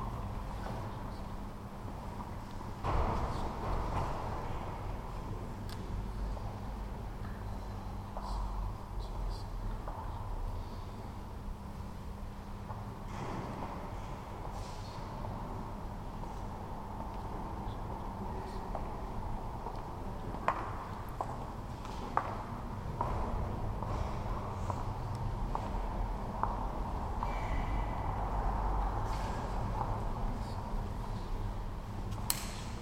The church of Saint Jacob
The church of saint Jakob between Old Town Square and Náměstí Republiky in Malá Štupartská street, behind Ungelt. At the entrance is hanging human hand, referring to the old legend about the thief, who wanted to steal a statue of Maria. Holy Mother grasped his hand and didnt relese him untill the guards came in the moring.Another story connected to the church is about certain Jan z Mitrovic who was burried alive and the thirds goes about the famous hero Jan Tleskač from the book for boys by Jaroslav Folglar Stínadla se bouří.
14 October, ~16:00